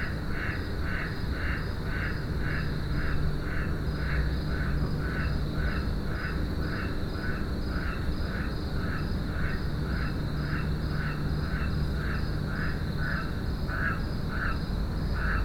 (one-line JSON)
{"title": "Parktown, Johannesburg, South Africa - frogs in the gardens hum over Jozi...", "date": "2016-11-08 22:20:00", "description": "listening to the nightly hum of Jozi from a beautiful roof-terrace over the gardens of Parktown...", "latitude": "-26.18", "longitude": "28.02", "altitude": "1695", "timezone": "GMT+1"}